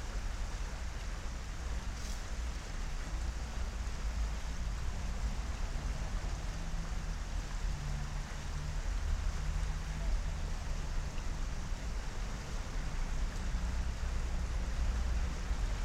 Vilnius, Lithuania, river Vilnia
ambiences at the river
Vilniaus apskritis, Lietuva, 18 October 2019, 18:00